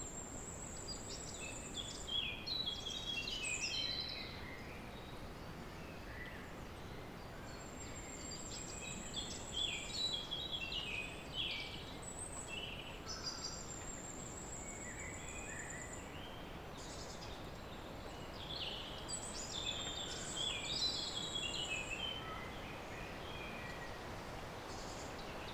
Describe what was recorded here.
Début de soirée. Le chant des oiseaux résonnent dans la clarière de la vallée. Early evening. The birds singing resound in the clearing of the valley. April 2019.